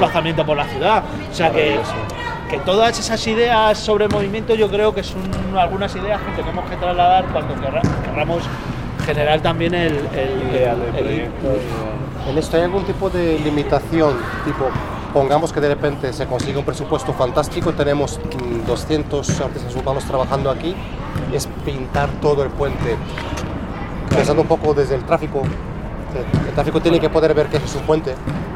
Adelfas, Madrid, Madrid, Spain - Pacífico Puente Abierto - Transecto - 12 - Llegada a Pacífico Puente Abierto. Final de Trayecto
Pacífico Puente Abierto - Transecto - Llegada a Pacífico Puente Abierto. Final de trayecto